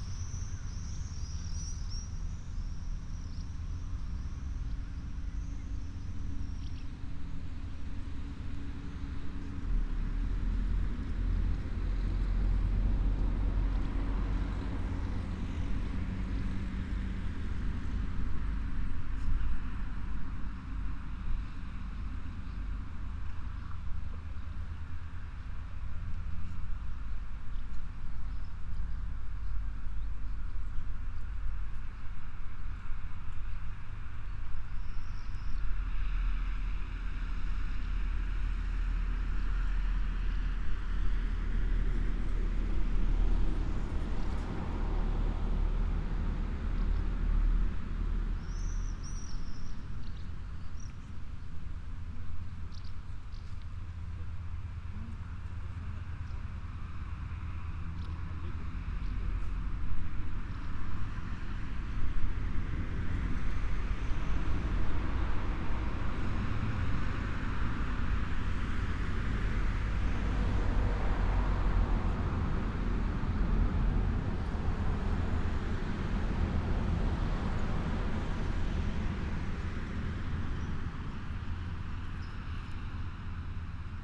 Kintai, Lithuania, stadium
Midday near main street of Kintai
Klaipėdos apskritis, Lietuva